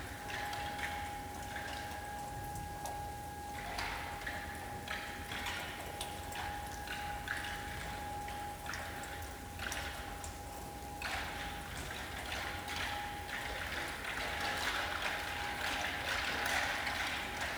Hiddenseer Str., Berlin, Germany - Snow drips from the roof
Although very sunny in this period the weather stayed cold and today it even snowed gently for a short time. The flakes immediately melted on touching the roof and the water dripped rhythmically down the building knocking into the metal window sills on the way. This is the main sound. The building was partially renovated two years ago and all the stone sills were covered with galvanised zinc. It's made quite difference to the soundscape of the Hinterhof when it rains (or snows). The continuous tone is made by the heating system and is the local soundmark that plays often but quite unpredictably.